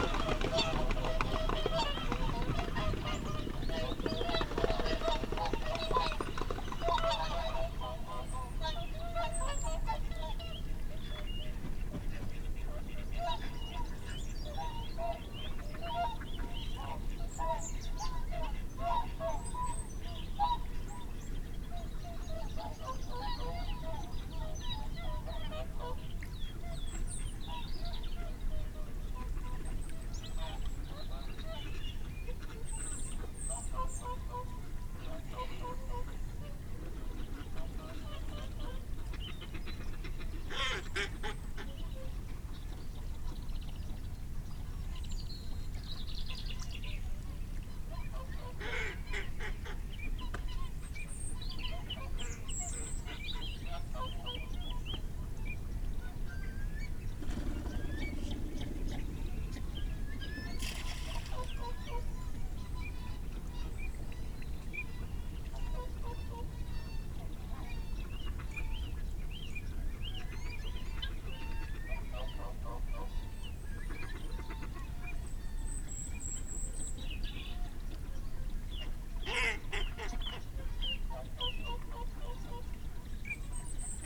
Dumfries, UK - whooper swan soundscape ... bag ...
whooper swan soundscape ... bag ... dpa 4060s clipped to bag to zoom f6 ... folly hide ... bird calls ... barnacle geese ... curlew ... song thrush ... moorhen ... shoveler ... great tit ... teal ... canada geese ... wigeon .... starling ... crow ... lapwing ... dunnock ... time edited unattended extended recording ...
Alba / Scotland, United Kingdom, 3 February 2022